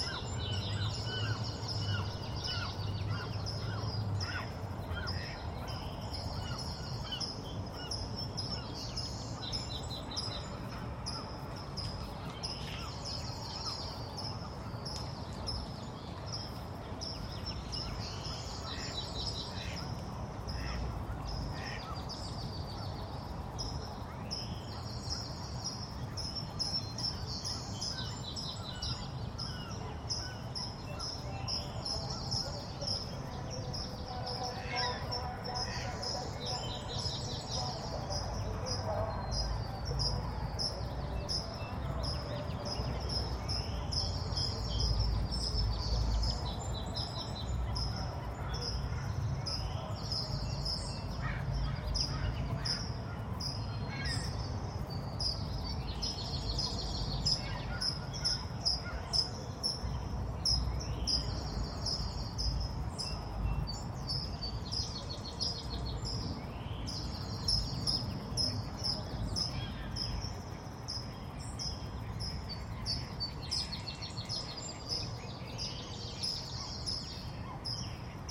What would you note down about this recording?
The Bird Sanctuary at Lake Merritt in Oakland, California from Dawn Chorus starting at 5:34am to roughly 6:20am. The recording was made with a pair of Lom Usi Pro microphones in X/Y configuration mixed with Sound Professionals SP-TFB-2 in-ear binaural mics.